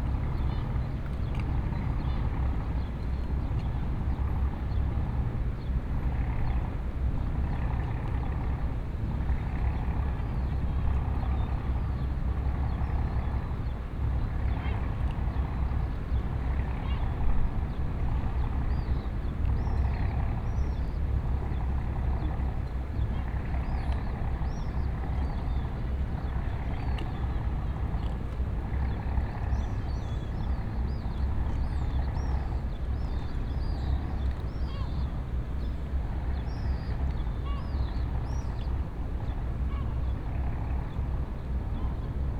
Entrada a la dársena de Fuengirola a las 6.45 de la mañana/ entry to the Fuengirola dock at the 6:45 am
18 July 2012, 06:45